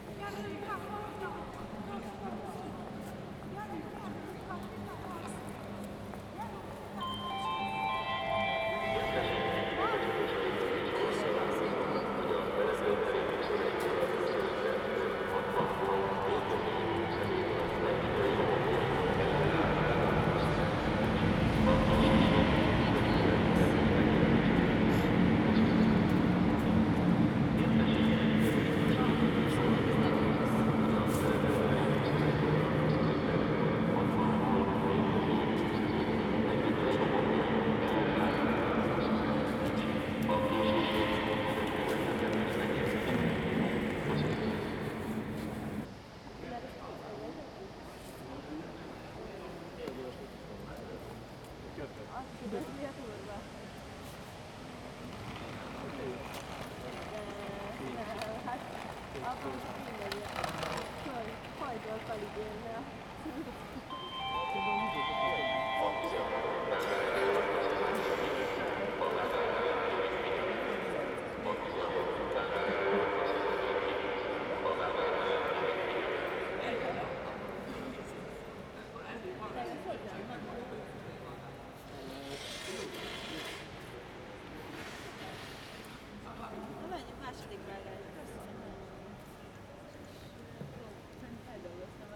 Budapest, Kerepesi út, Hungary - Keleti Railway Station - Interior Acoustics Pt. 02
A soundwalk inside the Keleti Railway Station highlighting the extraordinary architectural acoustics of this massive structure. This recordings were originally taken while waiting for the Budapest --> Belgrade night connection. Recorded using Zoom H2n field recorder using the Mid-Side microhone formation.